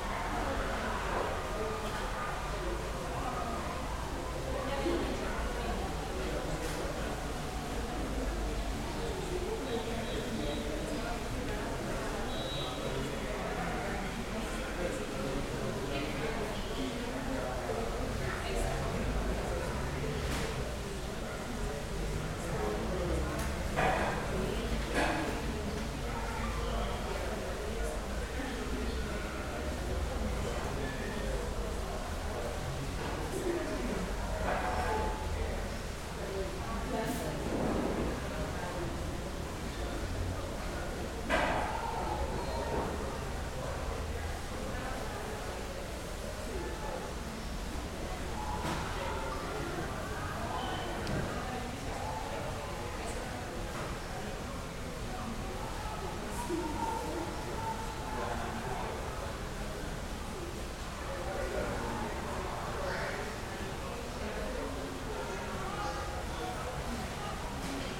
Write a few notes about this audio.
Biblioteca Universidad de Medellín, día soleado. Sonido tónico: Conversaciones lejanas. Señal sonora: Conversación cercana, sillas, pito y gritos lejanos de partido de fútbol. Tatiana Flórez Ríos - Tatiana Martínez Ospino - Vanessa Zapata Zapata